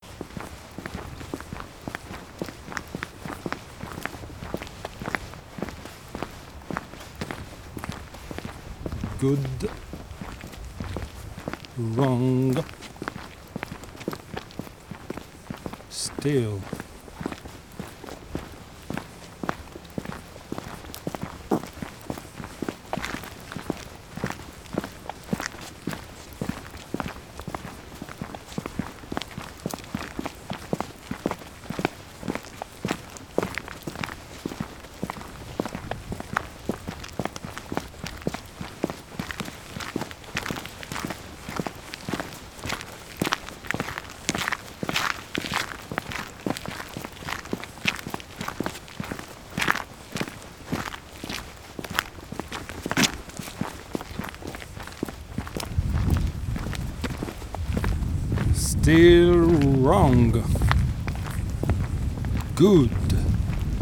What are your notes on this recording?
special recording by Wojciech Kucharczyk for the project with Carsten Stabenow for Art Meetings Festival, Kiev, 2015. part 03/04. zoom H2.